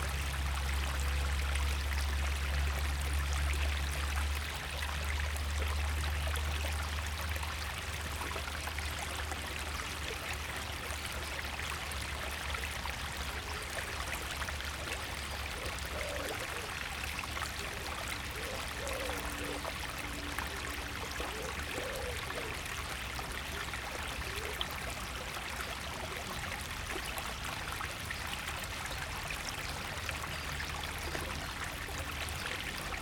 провулок Академіка Янгеля, Вінниця, Вінницька область, Україна - Alley12,7sound8thesoundoftheriver
Ukraine / Vinnytsia / project Alley 12,7 / sound #8 / the sound of the river